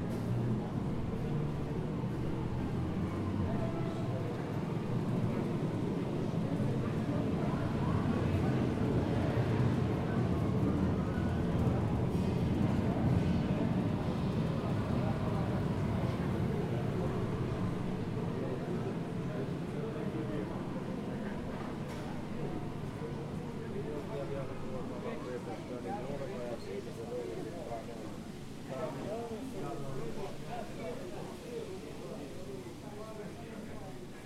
Bevkov trg, Nova Gorica, Slovenija - Vrvež v centru, nekaj glasbe
Small glimpse of a jazz concert in the center of the city, fountain shower, people of course.
Recorded with H5n + AKG C568 B